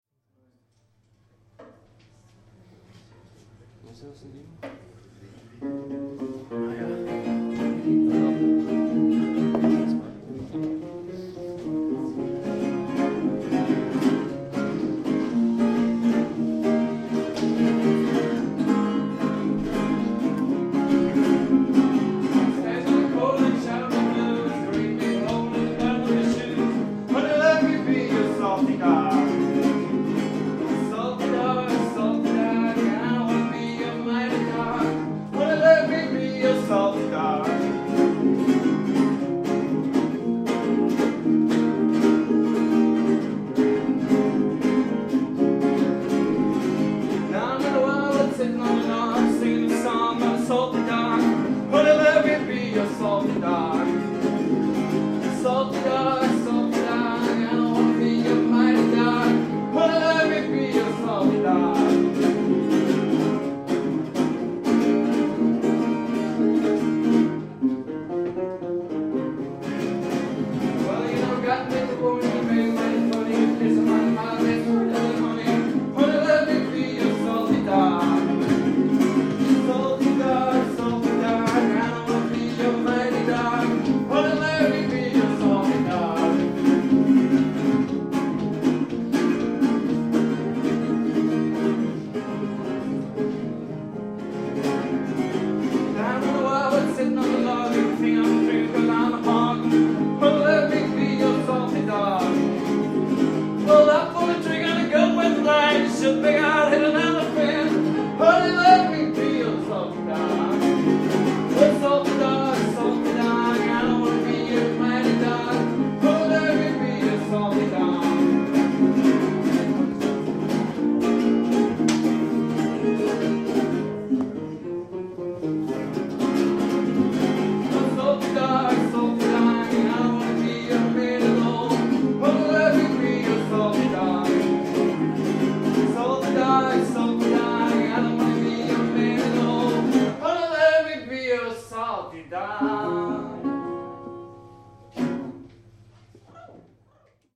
berlin, hobrechtstraße: mama bar - the city, the country & me: unknown folkie at mama bar
unknown folkie giving a concert at mama
the city, the country & me: may 6, 2008